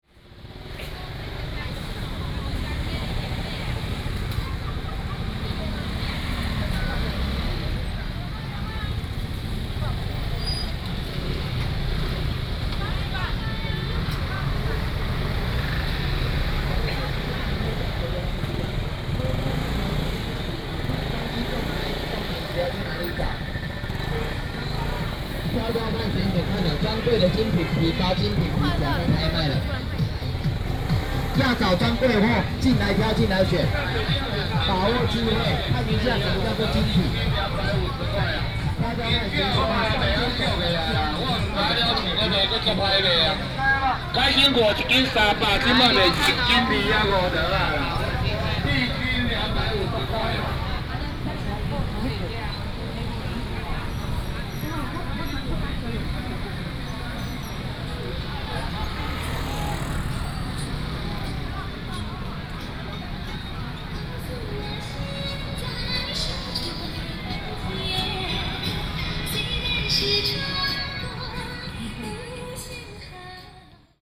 Bo’ai Rd., Yuanlin City - A variety of market selling voice
A variety of market selling voice, Traffic sound, Walking through the market